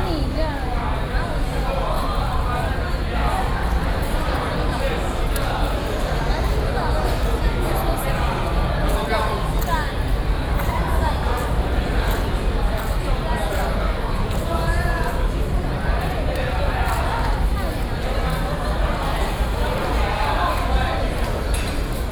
National Concert Hall., Taiwan - waiting
People waiting to enter the concert hall, Sony PCM D50 + Soundman OKM II
台北市 (Taipei City), 中華民國